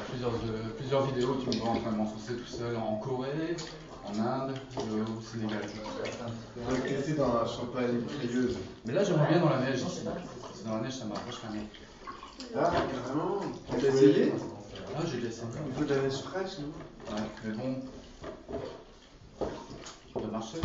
Der Kanal, Fred Martin prepare largile pour une autre imprunte
Fred Martin in the Kanal: 100 kg of clay serve as negative shape for a cast of peoples faces. More than 60 neighbours and friends came to have their face casted in plaster. Der Kanal, Weisestr. 59